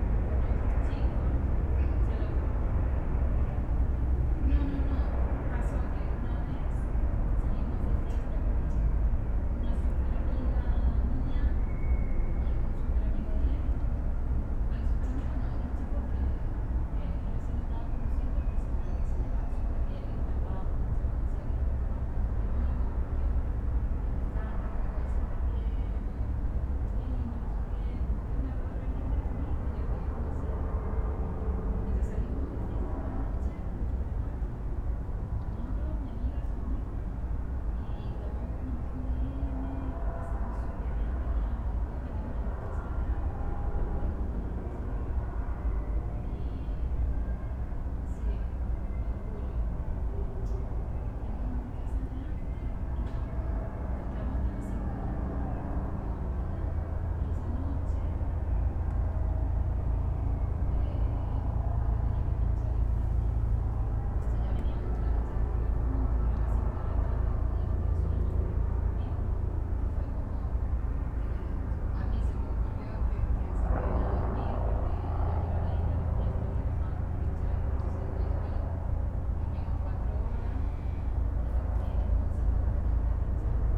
Berlin Bürknerstr., backyard window - Mayday sounds in the yard
sounds of mayday 2015 heard in my backyard
(Sony PCM D50, Primo EM172)